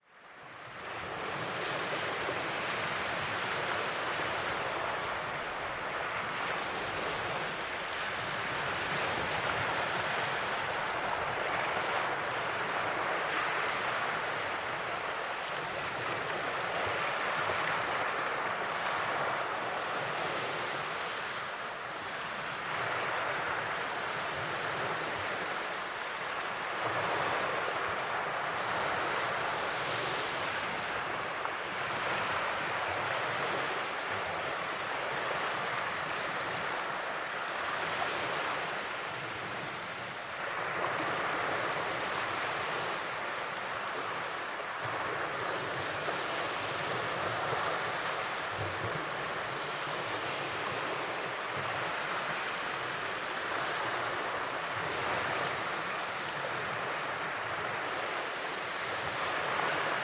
waves of Nida, the sea beyond the hill
waves of Nida water and sea sounds